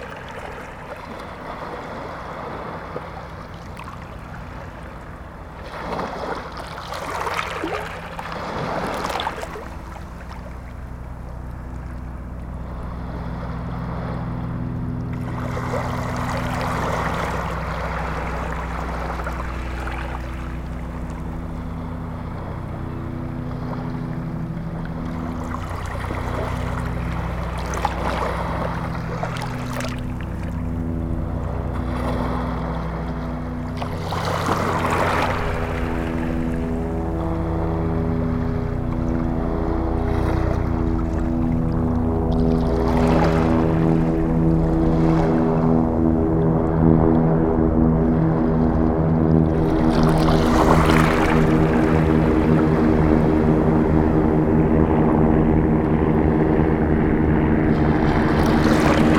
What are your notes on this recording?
Recording of the sea near a jetty. A medical helicopter is passing.